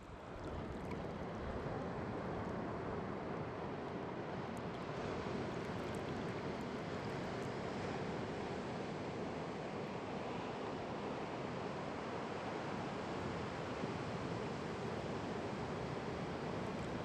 Point Reyes, Drakes beach, Bay Area, California
creek rushing through a beach and running straight into waves of Pacific